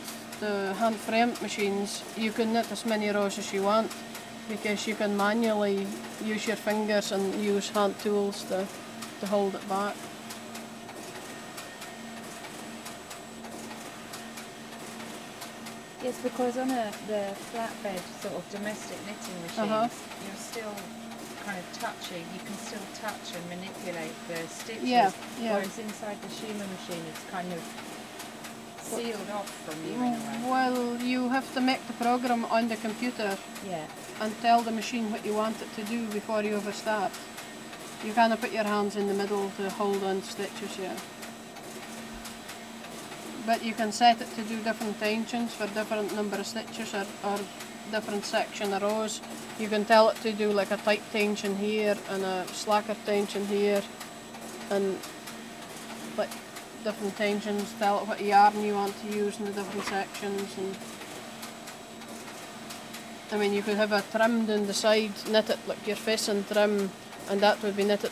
This is Sandra Johnson and I talking about the differences between domestic knitting machines, hand knitting and industrial knitting machines. In the background, the shima machine churns on. This is where Sandra works as a linker; she also has a croft in Yell and her own flock of Shetland sheep. I loved meeting Sandra, who has a hand in every part of the wool industry here on Shetland, from growing the wool at the start, to seaming up knitted garments at the end.In this recording she also discusses her work as a freelance machine knitwear linker, and the work she did in this capacity. Recorded with Audio Technica BP4029 and FOSTEX FR-2LE.
Shetland, Shetland Islands, UK, August 2013